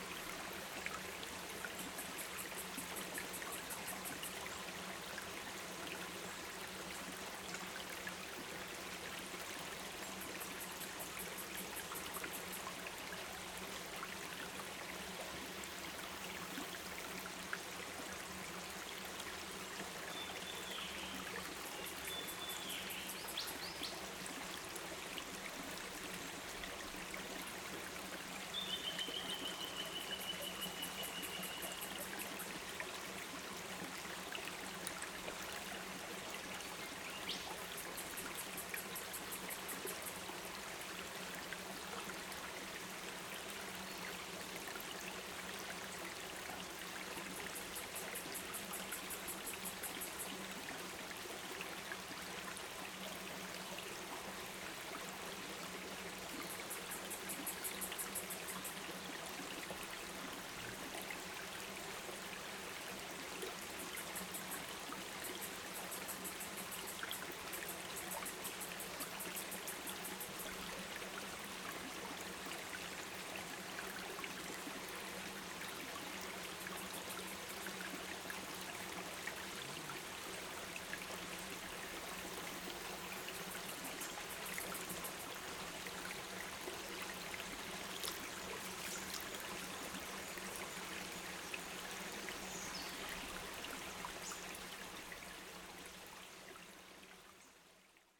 Recorded with a pair of DPA 4060s into an H6 Handy Recorder